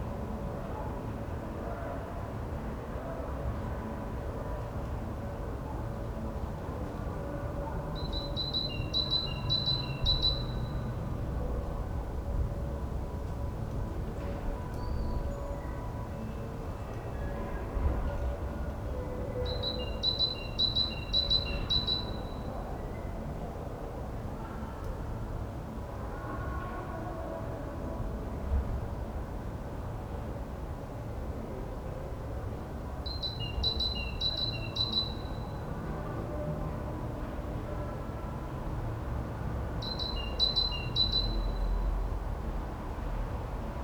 Berlin Bürknerstr., backyard window - Tuesday late afternoon, a bird
a solitude bird, sounds of nearby market, distant church bells, city sounds from afar.
(Sony PCM D50)